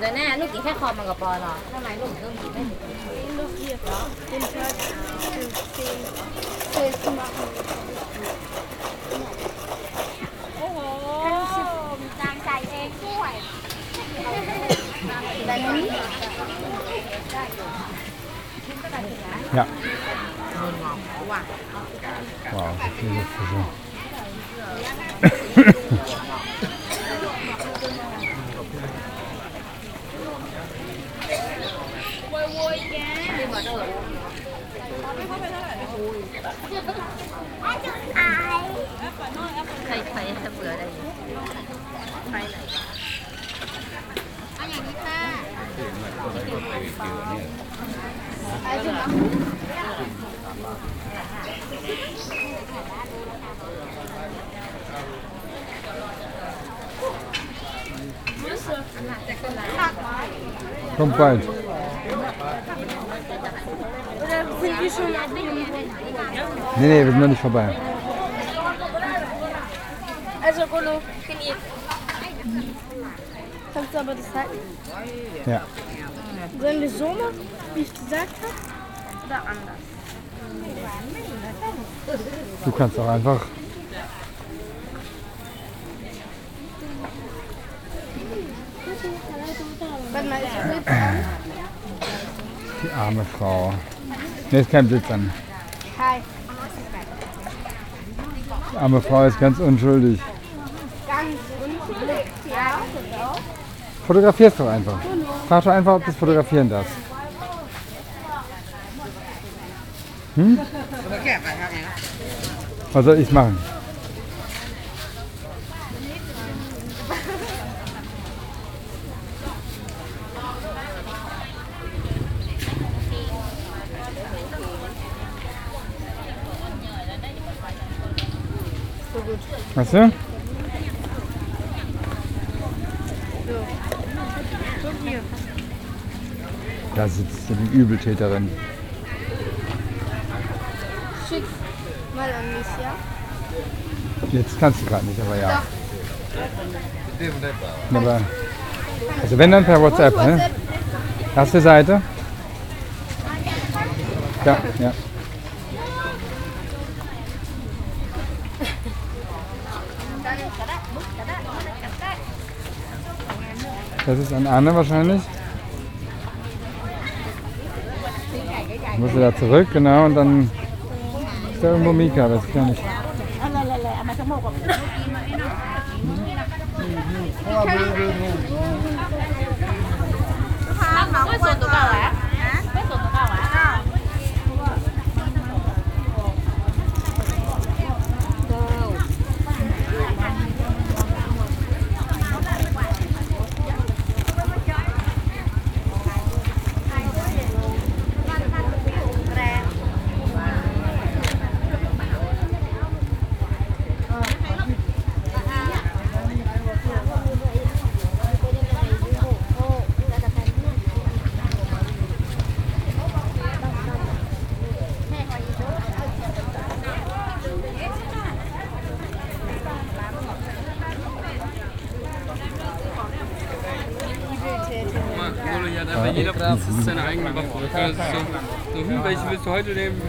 Tambon Ban Kat, Amphoe Mae Sariang, Chang Wat Mae Hong Son, Thailand - Markt Mae Sariang
Visiting the Thursday Market in Mae Sariang, me and my 2 sons (10 and 14), with vendors from the mountain minorities who speak their own languages. Around half into the recording my son is asking me to fake taking a selfie in order to get a photo of this ›cruel‹ woman who was selling turtles, supposedly for eating. My son hated this and became a vegetarian after having seen those poor animals. At around 2/3 into the recording 4 or 5 policemen asked us to have a selfie taken together with them; they were very friendly and kind.
23 August